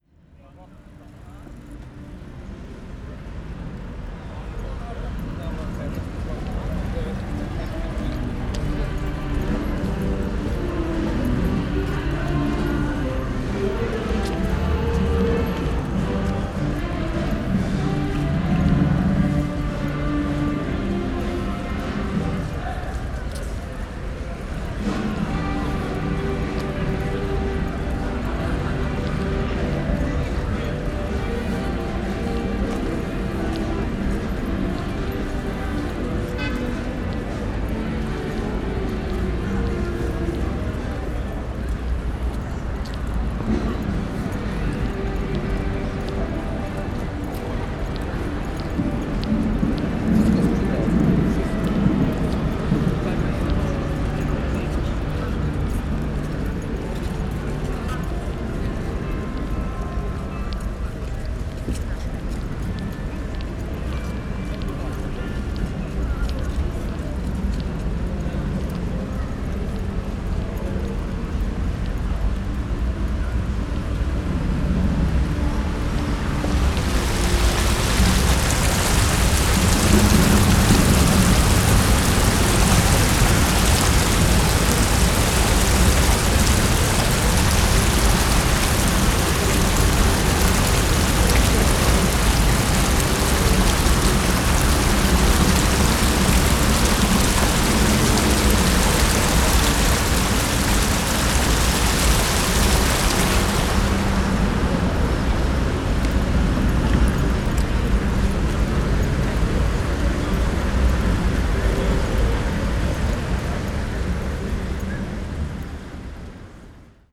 Gdańsk, Polska - IKM picnic 3
Dźwięki nagrano podczas pikniku zrealizowanego przez Instytut Kultury Miejskiej.
Nagrania dokonano z wykorzystaniem mikrofonów kontaktowych.
Poland, 11 August 2018, 14:13